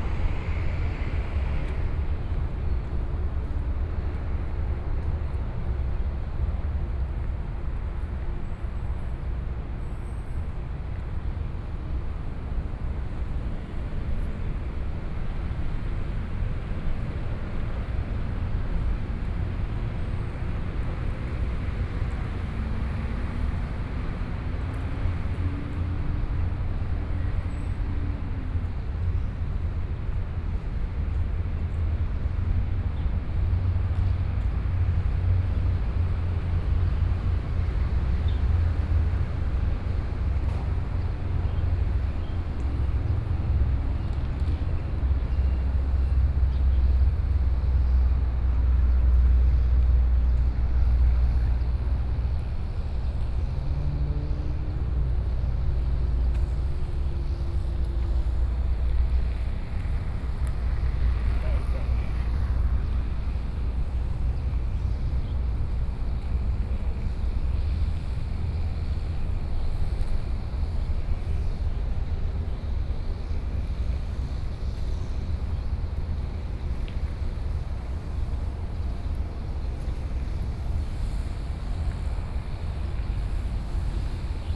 Walk in the park, Traffic noise is very noticeable Park
Kaohsiung City, Taiwan, May 2014